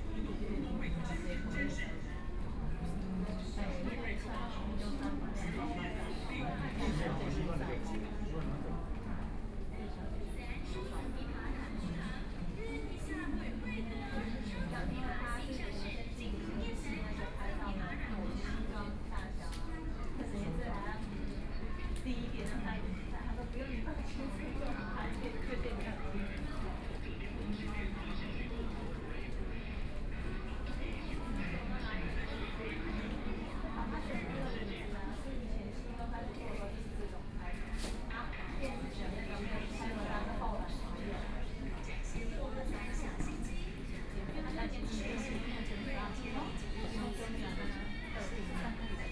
Minquan West Road Station - at the platform
Waiting for the train arrived at the platform, Binaural recordings, Sony PCM D50 + Soundman OKM II